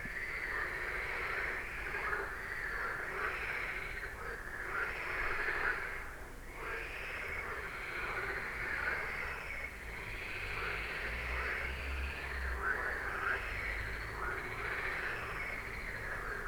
Runkeler Str., Beselich Niedertiefenbach, Deutschland - frog concert at midnight
Niedertiefenbach, Runkeler Str., midnight, I've never heard frogs before at this place, within the village. Curious since when they're here, have to ask.
(Sony PCM D50, DPA4060)